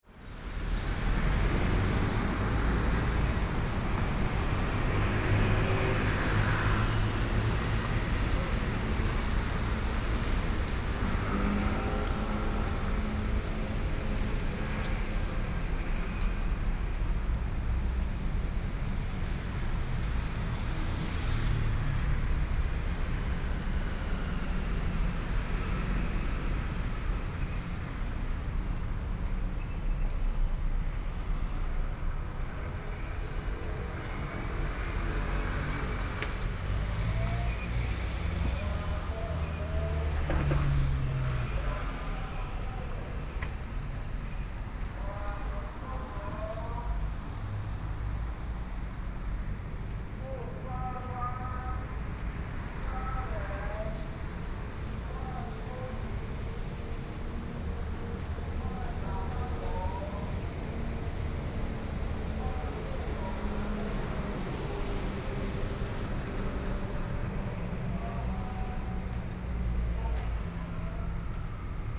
walking on the Road, Traffic Sound, To the east direction of travel
Sony PCM D50+ Soundman OKM II